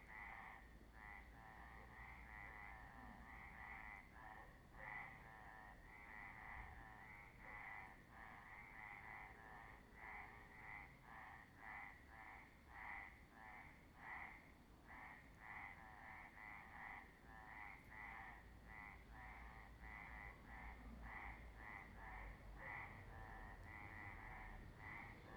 El Risco, Agaete, Las Palmas, Spain - frogs at night
evening frogs before the storm
27 February 2018, ~19:00